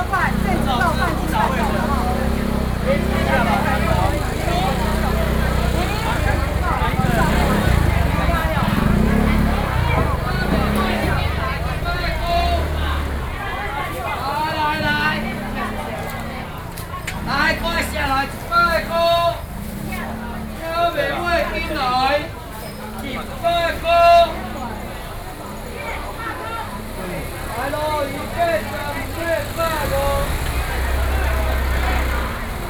Lane, Section, Sānhé Rd, Sanzhong District - Traditional markets
6 November, 10:28